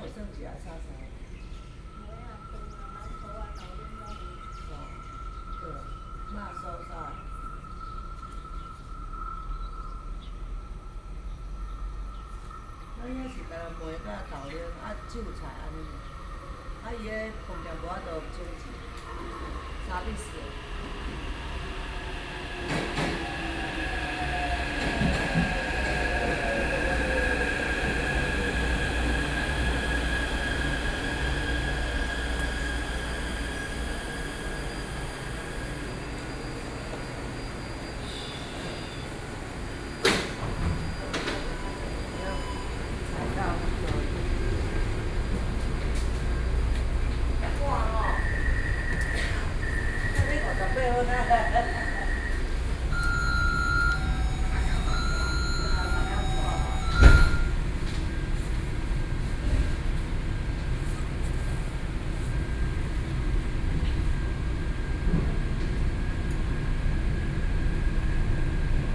Fuxinggang Station, Taipei - Wait for the first train
MRT station platforms, Wait for the first train, Sony PCM D50 + Soundman OKM II
18 April 2013, ~06:00